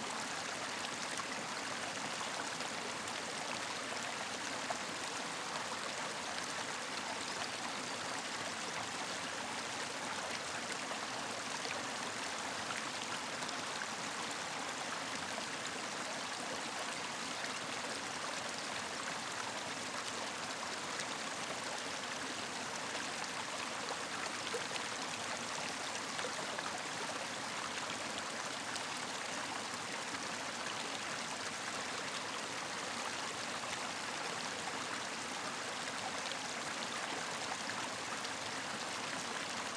Walking Holme small ravine
a hidden steep sided ravine